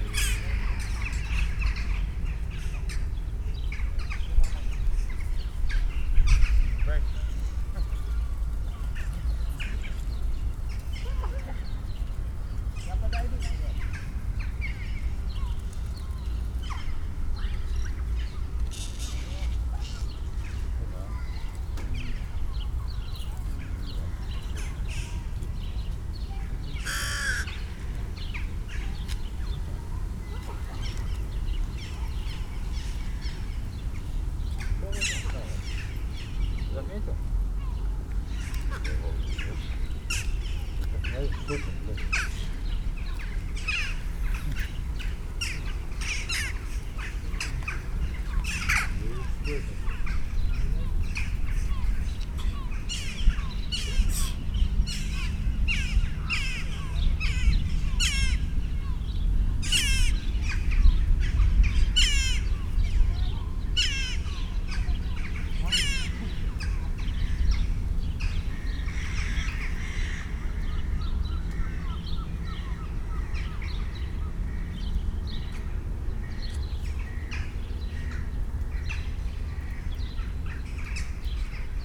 {"title": "Tallinn, Oismae - inner circle", "date": "2011-07-09 15:23:00", "description": "tallinn, oismae, housing area, ambience, birds, approaching thunderstorm", "latitude": "59.42", "longitude": "24.64", "altitude": "16", "timezone": "Europe/Tallinn"}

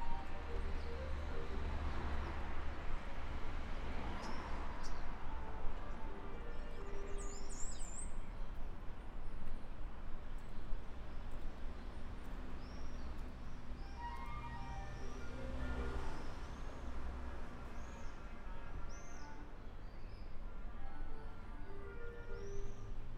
{"title": "Perugia, Italia - clarinet and traffic", "date": "2014-05-22 17:08:00", "latitude": "43.11", "longitude": "12.38", "altitude": "400", "timezone": "Europe/Rome"}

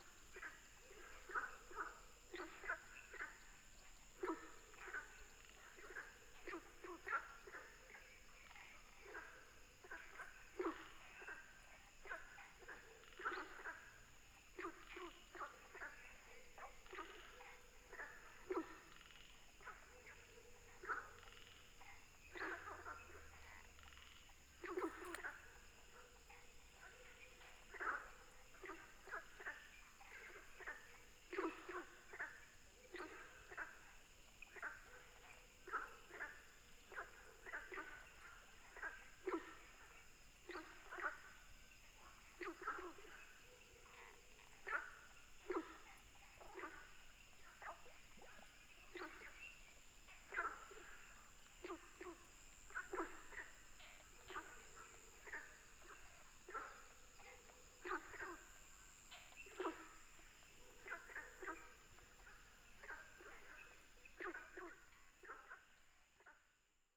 {"title": "三角崙, 魚池鄉五城村 - Frogs chirping", "date": "2016-04-19 18:56:00", "description": "Frogs chirping, Firefly habitat area", "latitude": "23.93", "longitude": "120.90", "altitude": "756", "timezone": "Asia/Taipei"}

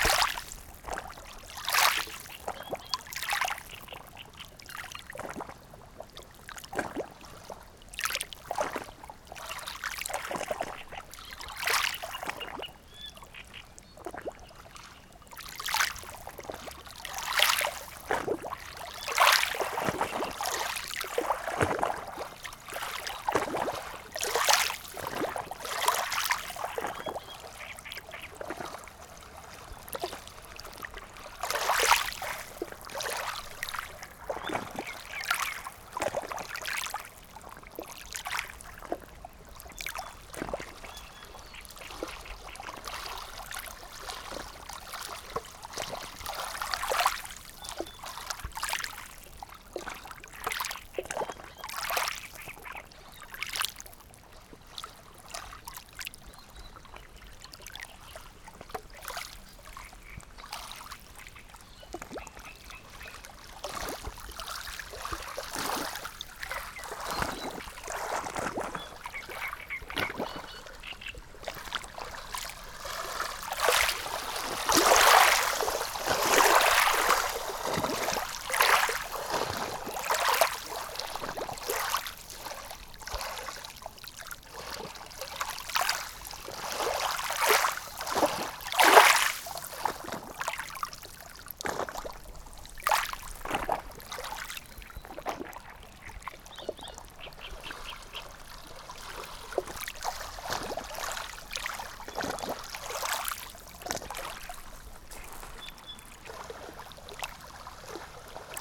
{"title": "Ohrid, Macedonia (FYROM) - Lagadin, Ohrid Lake", "date": "2015-07-18 23:38:00", "description": "Recordings done with Tascam DR-100 MKII for the purpose of the Worlds listening day 2015, one relaxed night at the beach at Lagadin on the Ohrid lake.", "latitude": "41.05", "longitude": "20.80", "altitude": "723", "timezone": "Europe/Skopje"}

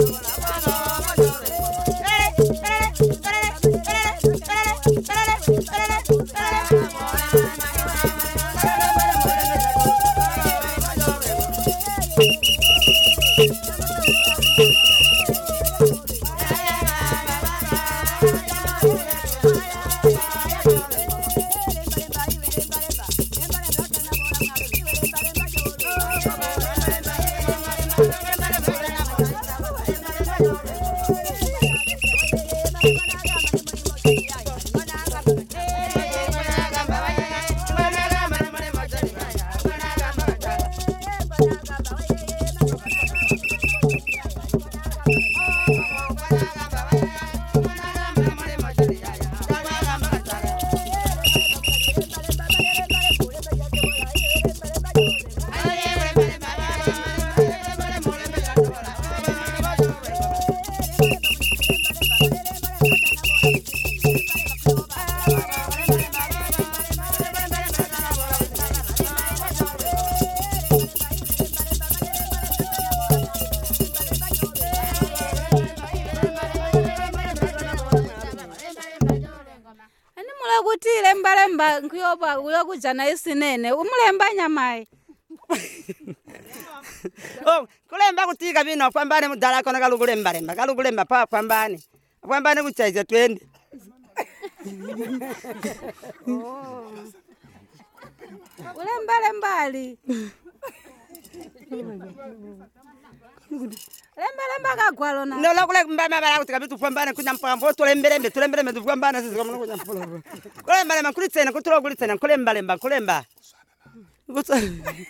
{"title": "Kariyangwe, Binga, Zimbabwe - Playing Chilimba...", "date": "2016-07-23 11:10:00", "description": "Chilimba is a traditional form of entertainment among the Batonga. Playing Chilimba involves a group of people, often women, in joyful singing, drumming and dancing. The lyrics of the Chilimba songs may however also contain teachings, such as here, “don’t fall in love with a married person”.\nIn contemporary Chitonga, the word “chilimba” also means “radio”.", "latitude": "-17.98", "longitude": "27.51", "altitude": "887", "timezone": "GMT+1"}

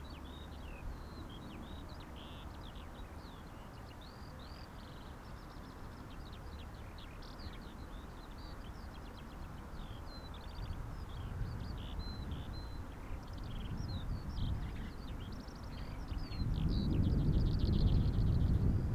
{"title": "Poznan outskirts, Morasko - wind interuption", "date": "2013-04-21 14:41:00", "description": "birds in the flied very active on this spring afternoon. wind steps in.", "latitude": "52.47", "longitude": "16.91", "altitude": "97", "timezone": "Europe/Warsaw"}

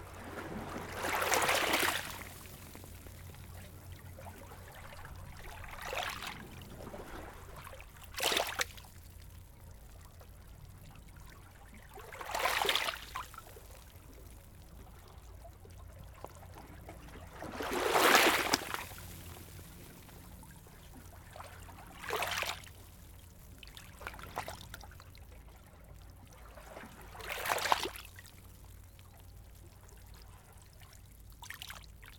{"title": "Nooda tee, Tallinn, Eesti - A rocky beach", "date": "2019-09-30 12:00:00", "description": "The waves land on a rocky beach. Further afield you can hear the road construction. Recorder: Zoom H6, MSH-6 mic capsule.", "latitude": "59.45", "longitude": "24.61", "altitude": "15", "timezone": "Europe/Tallinn"}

